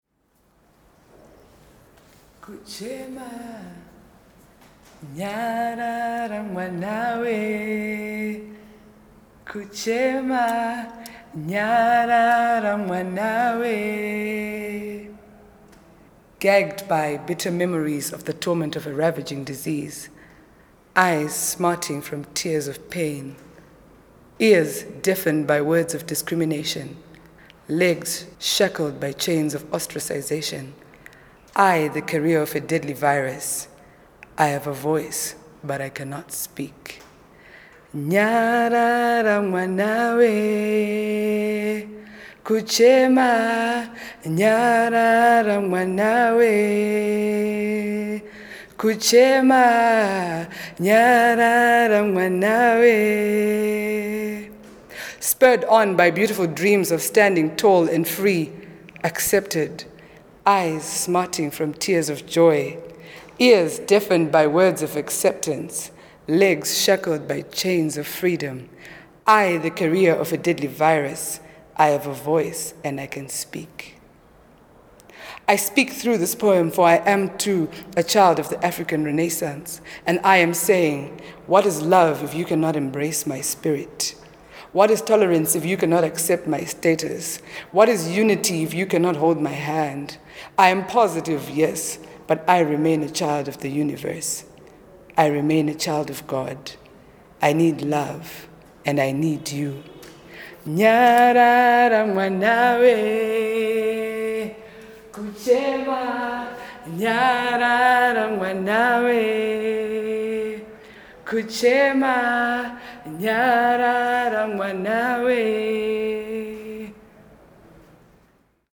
African Collection, National Gallery, Harare, Zimbabwe - Blackheat sings in the African Collection...
Nancy Mukondyo aka Blackheat DeShanti recites a poem in the African Collection of the National Gallery of Zimbabwe Harare. She walks and dances around the display while reciting…
Blackheat DeShanti is a Harare performance poet often also presenting her work with her band.
16 October 2012